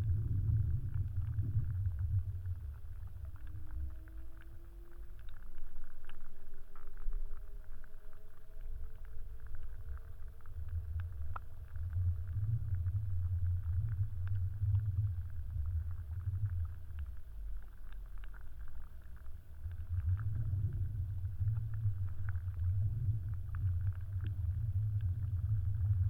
Jūrmala, Latvia, wavebreaker in river
geophone on concrete wavebreaker and hydrophone near it
21 July 2020, ~15:00